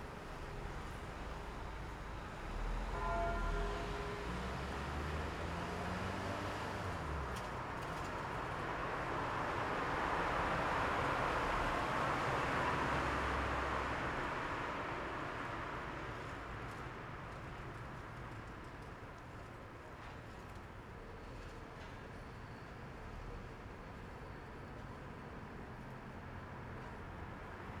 Ménilmontant, Paris - Peal of church bells on Sunday in Paris
On Ménilmontant street in Paris, church bells rang out inviting the faithful to the Sunday mass. at "Église Notre Dame de La Croix". Recorded from the window of a building in front.
Recorded by a MS Setup Schoeps CCM41+CCM8
On a Sound Devices 633 Recorder
Sound Ref: FR160221T01